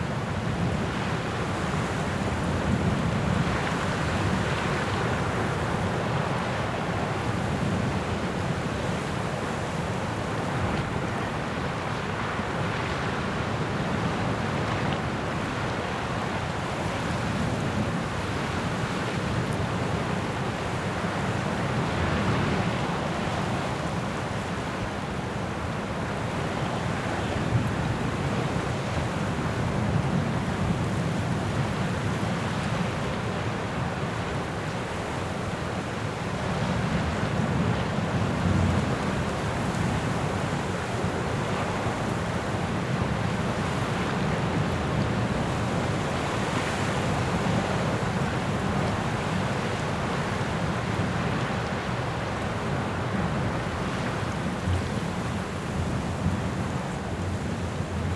Pointe de Jardeheu - Wind grass
Wind and grass, Zoom H6
Digulleville, France